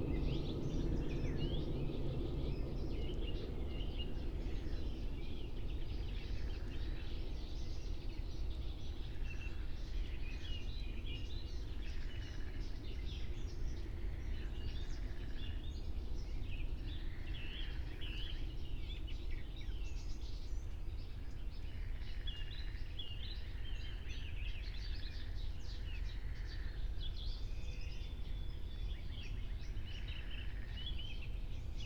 03:30 Berlin, Wuhletal - Wuhleteich, wetland
Deutschland, 17 June 2021, 03:30